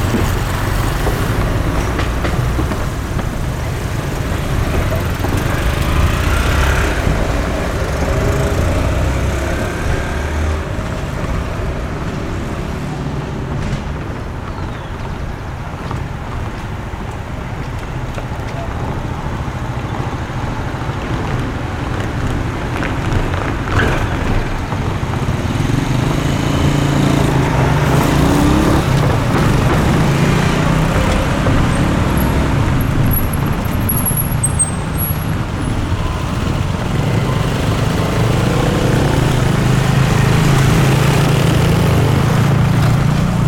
Cl., Bogotá, Colombia - Semi Crowded Atmosphere - Bogota Street
You will hear: various types of vehicles, large and small, car, trucks, bicycles, motorcycles, all of these at different speeds, horn, people walking.